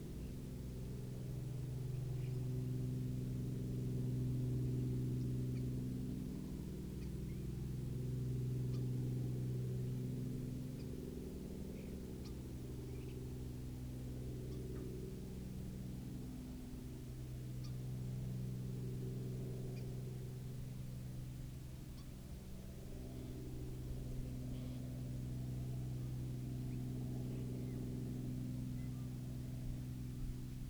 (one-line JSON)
{"title": "Wendover clui residence support unit", "date": "2010-10-24 18:31:00", "description": "Wendover Residence Backyard", "latitude": "40.73", "longitude": "-114.03", "altitude": "1289", "timezone": "America/Denver"}